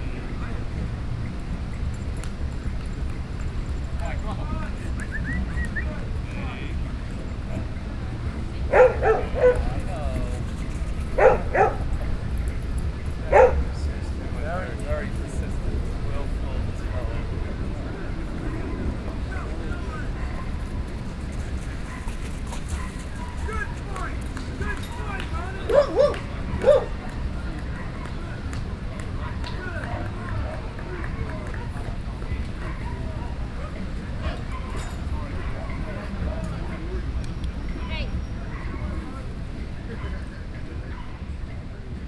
New York, Washington Square, dogs reserved place.
NY, USA, 8 September 2010